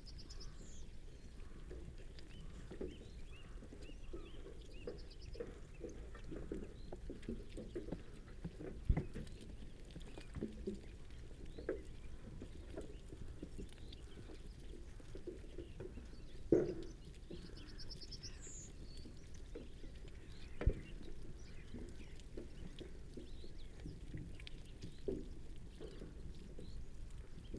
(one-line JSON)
{"title": "Luttons, UK - Not many baas at breakfast ...", "date": "2017-03-12 07:49:00", "description": "Not many baas at breakfast ... sheep flock feeding from troughs ... some coughing and snorts from the animals ... occasional bleats towards end ... recorded using a parabolic ... bird calls from ... pied wagtail ... blue tit ... tree sparrow ... Skylark ... rook ... crow ... meadow pipit ... pheasant ... song thrush ... yellowhammer ...", "latitude": "54.12", "longitude": "-0.56", "altitude": "100", "timezone": "Europe/London"}